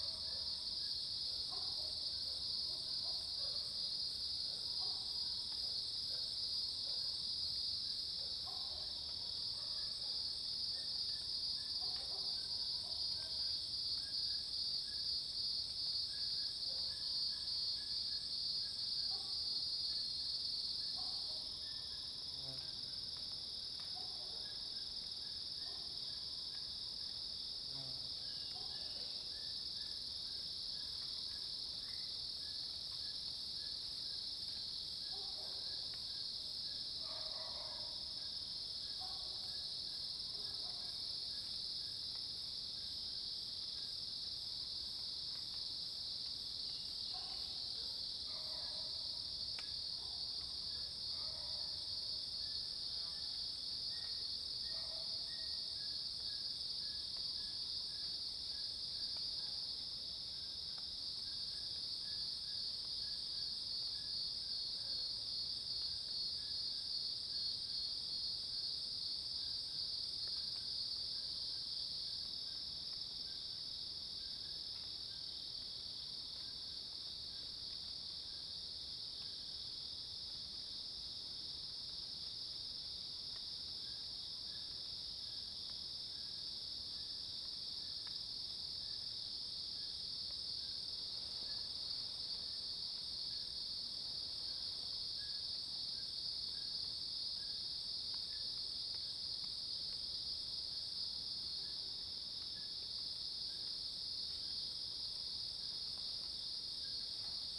Hualong Ln., Yuchi Township, Nantou County - In the woods
Insects called, Birds call, Cicadas cries, Dog barking
Zoom H2n MS+XY
Puli Township, 華龍巷164號, 19 September, 06:03